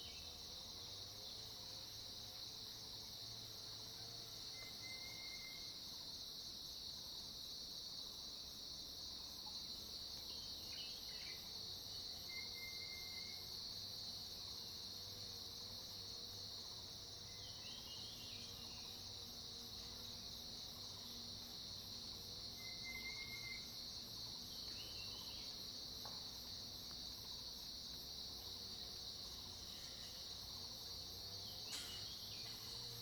種瓜路, 埔里鎮桃米里 - Morning in the mountain
Early morning, Bird calls, Morning in the mountain
Zoom H2n MS+XY